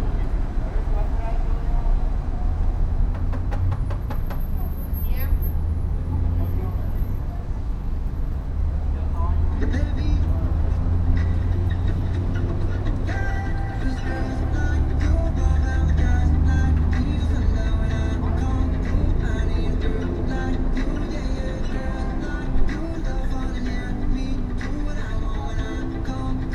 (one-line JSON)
{"title": "Blvd. Juan Alonso de Torres Pte., Valle del Campestre, León, Gto., Mexico - Autolavado acqua car wash 24/7.", "date": "2022-06-03 14:52:00", "description": "Acqua car wash 24/7.\nI made this recording on june 3rd, 2022, at 2:52 p.m.\nI used a Tascam DR-05X with its built-in microphones and a Tascam WS-11 windshield.\nOriginal Recording:\nType: Stereo\nEsta grabación la hice el 3 de junio de 2022 a las 14:52 horas.", "latitude": "21.16", "longitude": "-101.69", "altitude": "1823", "timezone": "America/Mexico_City"}